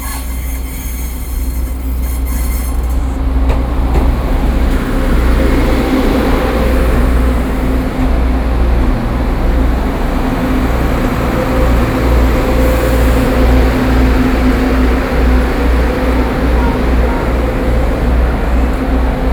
十分車站, Pingxi District, New Taipei City - Train pull into the station
Train pull into the station .
New Taipei City, Taiwan, 13 November 2012, ~16:00